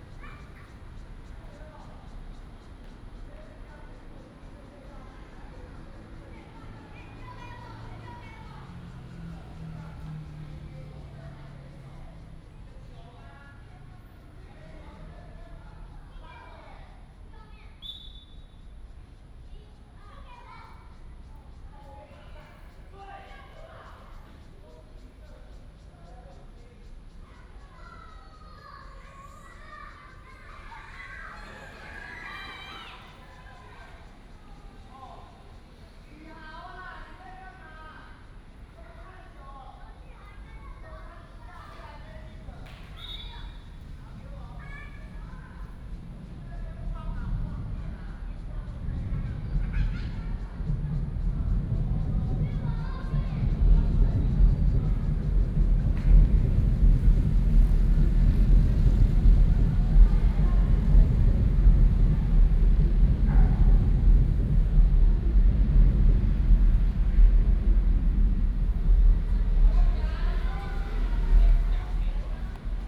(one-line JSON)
{"title": "Sec., Beitou Rd., Beitou Dist., Taipei City - MRT train sounds", "date": "2015-07-30 19:08:00", "description": "under the track, MRT train sounds\nPlease turn up the volume a little. Binaural recordings, Sony PCM D100+ Soundman OKM II", "latitude": "25.13", "longitude": "121.50", "altitude": "10", "timezone": "Asia/Taipei"}